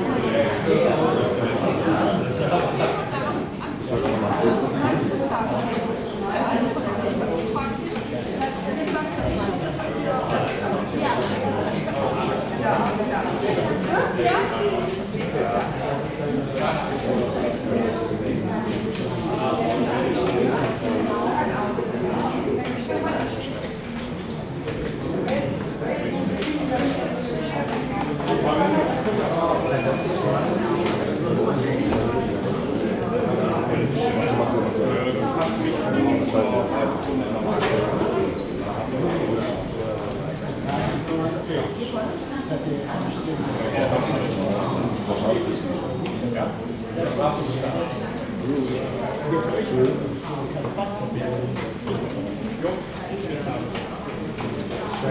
köln, breite str, post - post office, awaiting service
26.01.2009 14:30
postfiliale, wartende menschen, gespräche, gemurmel /
post office, people waiting, talks and muttering